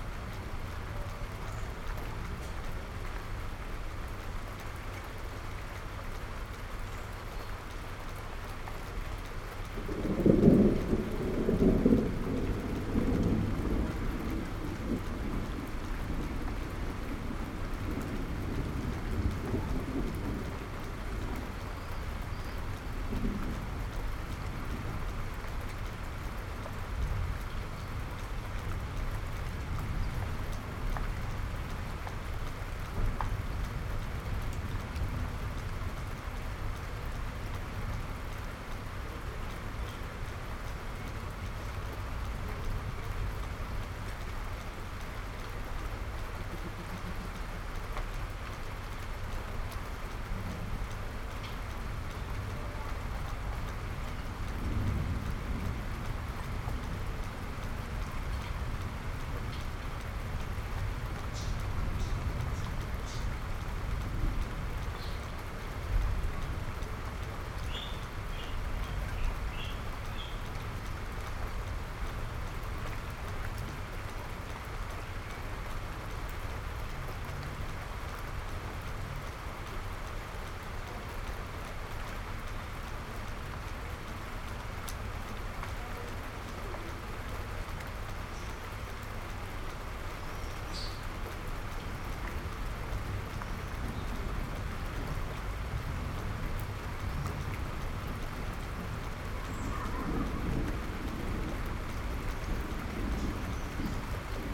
{"title": "Van Wesenbekestraat, Antwerpen, Belgium - Rain and thunder", "date": "2021-06-04 16:01:00", "description": "Recording of a summer thunderstorm in Antwerp.\nMixPre6 II with mikroUši Pro.", "latitude": "51.22", "longitude": "4.42", "altitude": "11", "timezone": "Europe/Brussels"}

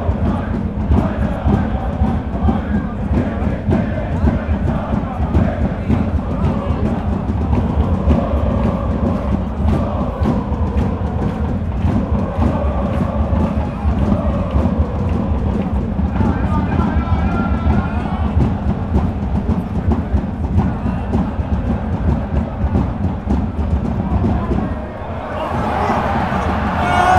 {"title": "Millerntorstadion, guest fan block - FC St. Pauli - Werder Bremen", "date": "2022-04-09 14:12:00", "description": "2. Fußball Bundesliga, FC St. Pauli against Werder Bremen, near the guest fan block", "latitude": "53.56", "longitude": "9.97", "altitude": "21", "timezone": "Europe/Berlin"}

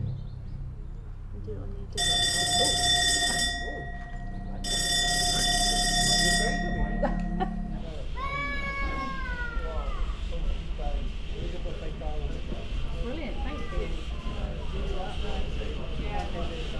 {"title": "Steam at Toddington Station, Gloucestershire, UK - Steam at Toddington Station", "date": "2019-07-02 10:09:00", "description": "A general scene at this preserved steam line station. Recorded while sitting on the bench on the station platform. MixPre 6 II 2 x Sennheiser MKH 8020s + Rode NTG3.", "latitude": "51.99", "longitude": "-1.93", "altitude": "88", "timezone": "Europe/London"}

